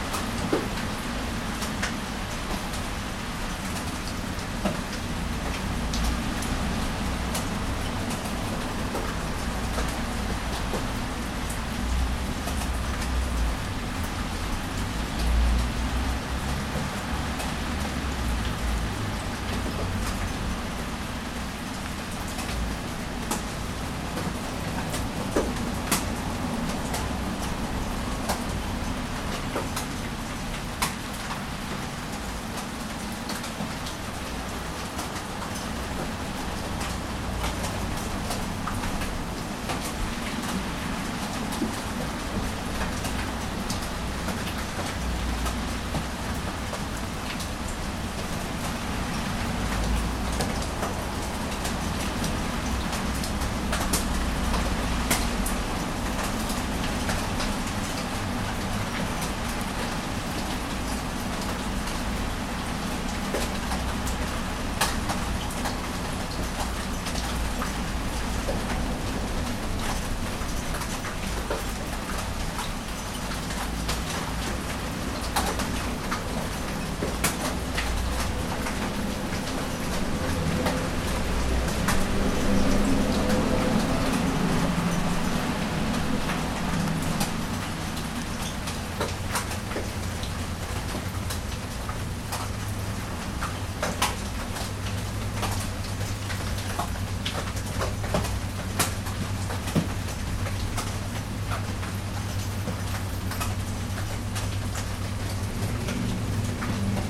Morning Rain - Arbour Hill, Dublin - Morning Rain
Morning summer rain recorded through a window opening onto small yard - July 2012, for World Listening Day